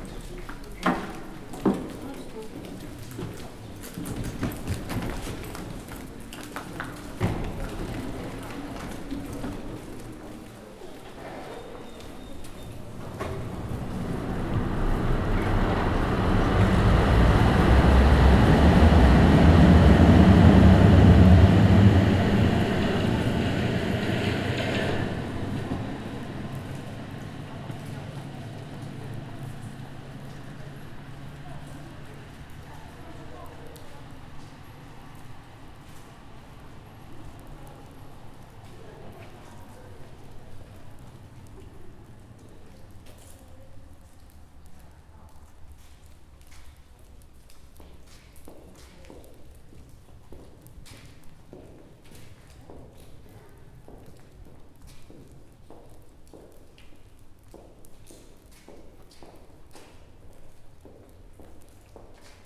{"title": "Poznan, Piatkowo district, Szymanowskiego tram stop, night trams", "date": "2010-07-18 00:40:00", "description": "night trams ariving and departing, water drops dripping from the overpass above", "latitude": "52.46", "longitude": "16.92", "altitude": "91", "timezone": "Europe/Warsaw"}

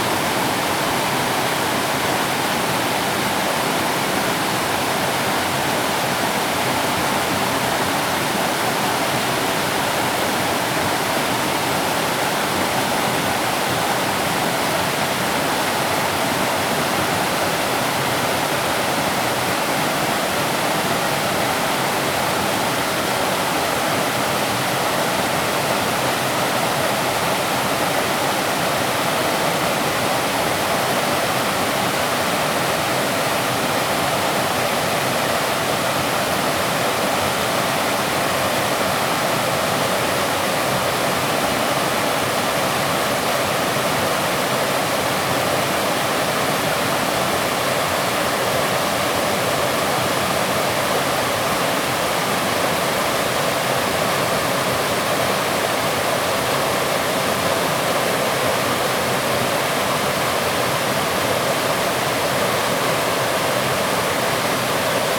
{"title": "五峰旗瀑布, 礁溪鄉Yilan County - Waterfalls and Stream", "date": "2016-12-07 10:14:00", "description": "Waterfalls and Stream\nZoom H2n MS+ XY", "latitude": "24.83", "longitude": "121.75", "timezone": "GMT+1"}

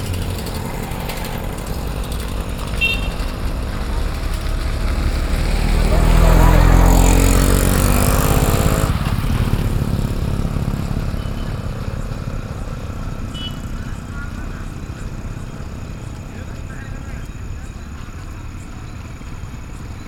{"title": "Saundatti, Near Khadi Kendra, Walk", "date": "2009-10-25 19:56:00", "latitude": "15.77", "longitude": "75.11", "altitude": "684", "timezone": "Asia/Kolkata"}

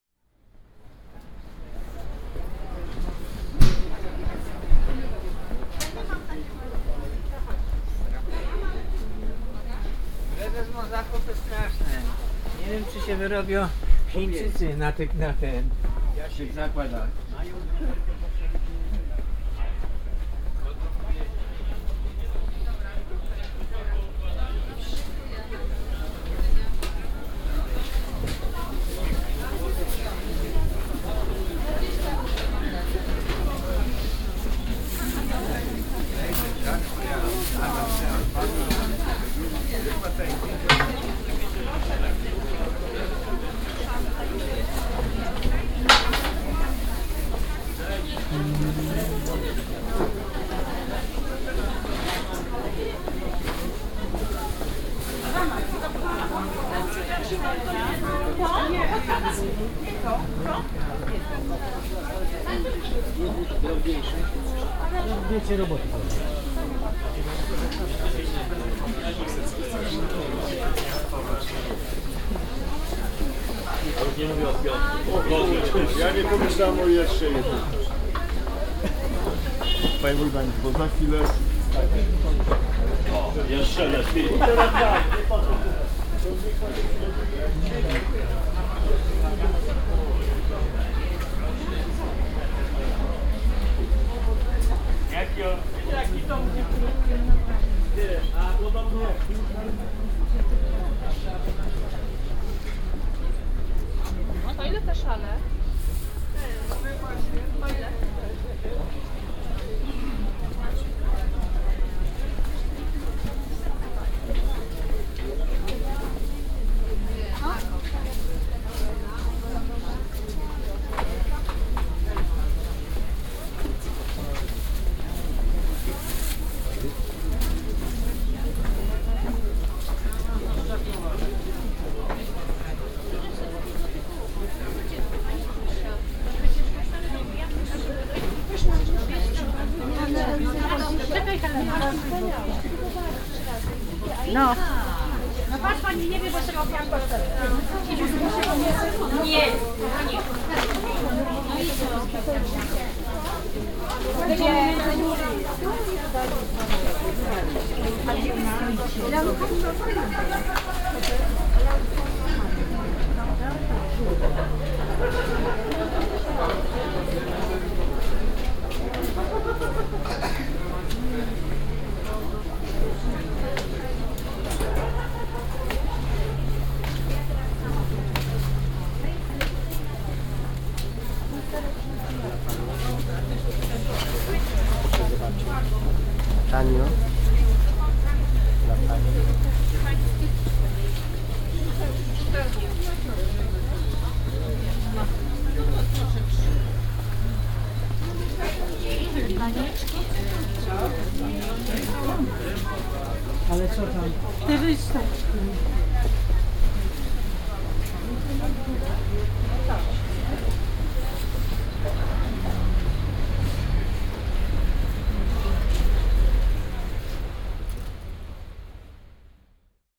{"title": "Stary Kleparz, Kraków, Poland - (325) Green market", "date": "2017-12-07 12:49:00", "description": "Binaural recording of walking around a green market.\nRecorded with Soundman OKM on Sony PCM D-100", "latitude": "50.07", "longitude": "19.94", "altitude": "219", "timezone": "Europe/Warsaw"}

